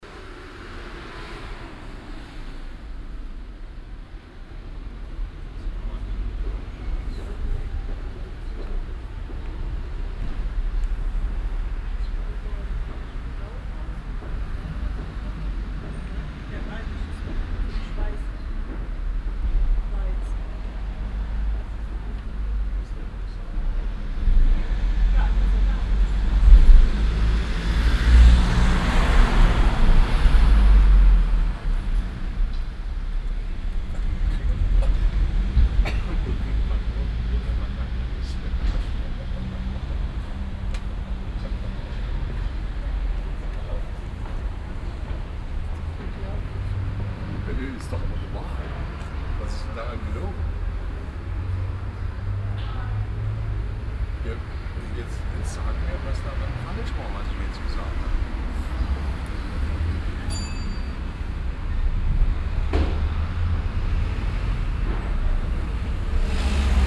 cologne, kleiner griechenmarkt, gastronomie + strasse
gastronomie mit tischen auf der strasse, gesprächsfetzen, die küchenklingel, verkehr
soundmap nrw - social ambiences - sound in public spaces - in & outdoor nearfield recordings